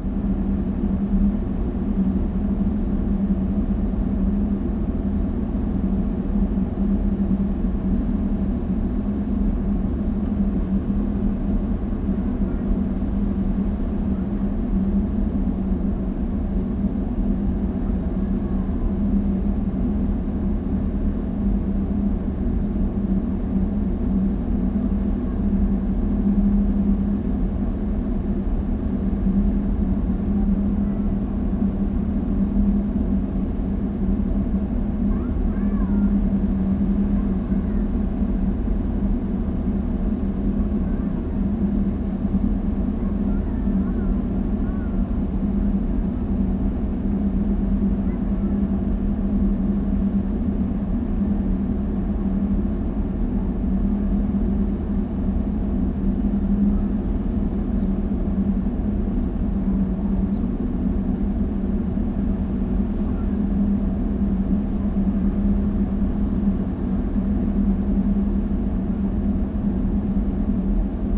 stromboli, ginostra - power station
the community at ginostra can't rely on solar power only and has to run diesel generators too.
20 October, 10:20